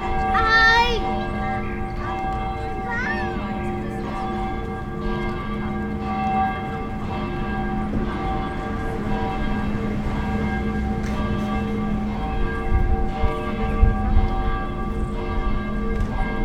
Praha, Česká republika - Church Bells and children
Karlínské náměstí, 6pm the bells on the Church, trafic and kids playing.